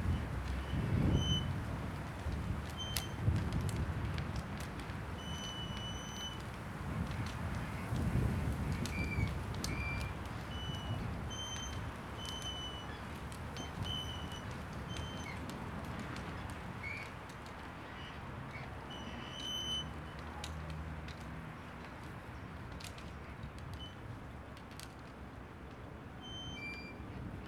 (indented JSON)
{
  "title": "Poznan, Kornicka street, at Opel dealership - 3 flagpoles",
  "date": "2014-05-02 07:37:00",
  "description": "three masts tensing up and bending in brisk wind.",
  "latitude": "52.40",
  "longitude": "16.95",
  "altitude": "65",
  "timezone": "Europe/Warsaw"
}